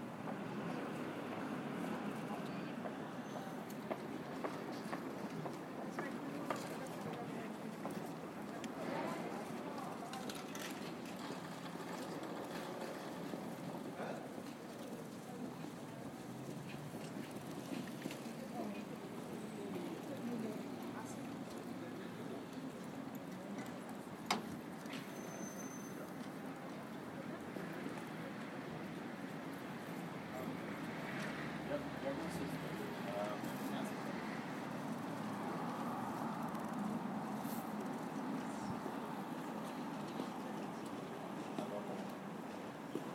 Französische Straße, Berlin, Deutschland - Start of the temporary car-free section
sitting in the first Parklet of the Project "autofreie Friedrichstraße", in front of Lafayette, sound of cars at the corner and people walking by; some bicycles passing by
13 November